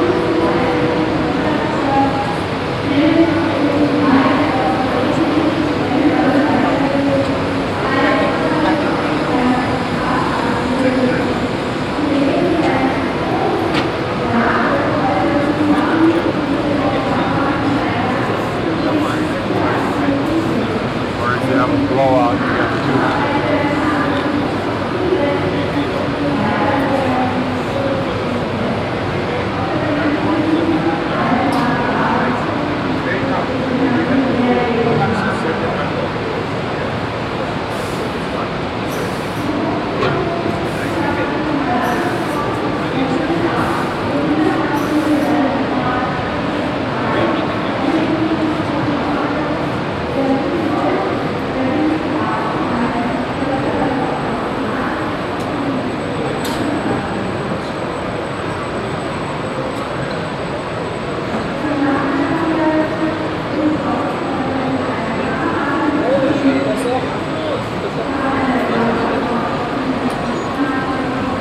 Stockum, Düsseldorf, Deutschland - düsseldorf, trade fair, hall 16
Inside hall 16 of the Düsseldorf trade fair during the DRUPA. Soundwalk through the hall recording the sound of a product presentation in the overall fair ambience with interantional visitors.
soundmap nrw - social ambiences and topographic field recordings